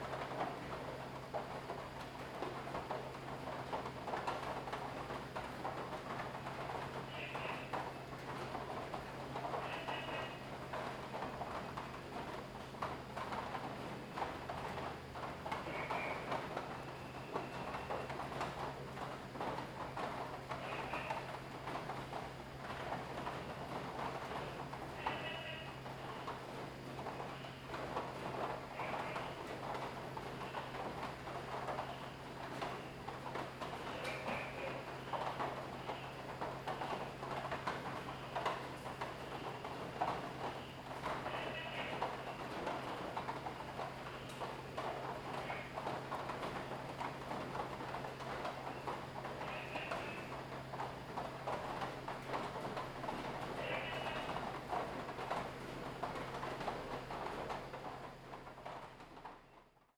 Rainy Day, Inside the restaurant, Frog calls
Zoom H2n MS+XY
Nantou County, Taiwan, 5 September 2015